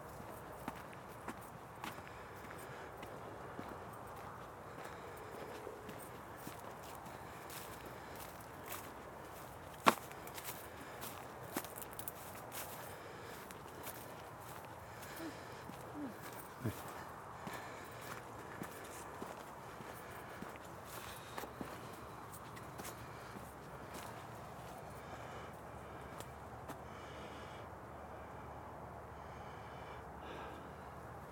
footbridge, Hoo Peninsula, Kent, UK - Leaving Strood via Higham
Walking across planted fields and over the bridge, on to a bridleway parallel to the road on the other side. Note how an earth bank provides significant attenuation to traffic noise as the bridleway inclines downwards relative to the road.